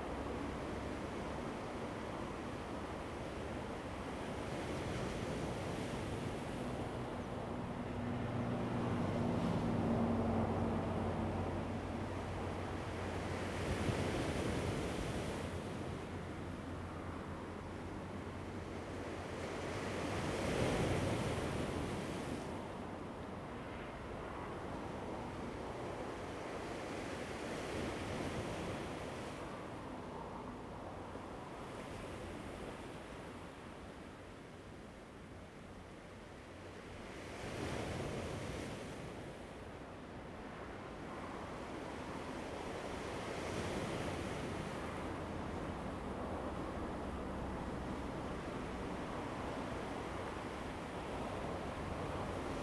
Jilin Rd., Taitung City - Standing on the embankment
Standing on the embankment, Waves, Fighter, Traffic Sound, The weather is very hot
Zoom H2n MS+XY
6 September 2014, ~09:00